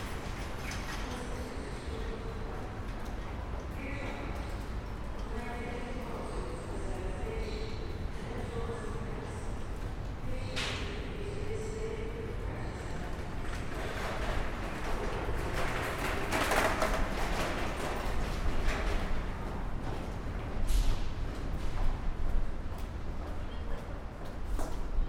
Weesperstraat, Amsterdam, Netherlands - Small Tunnel for bikers and pedestrians near subway entrance
Binaural format with two DPA 4061. Distant buzz coming from overhead traffic and metro tube below. Passing bikers and pedestrians. reflective space.